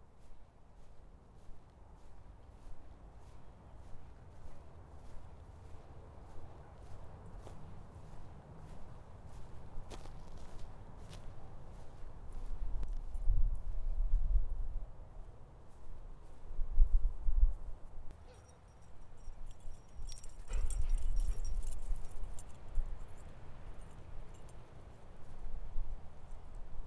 Gunbarrel, Boulder, CO, USA - Night Dog Walk
Walking the dog in the park at night.
30 January 2013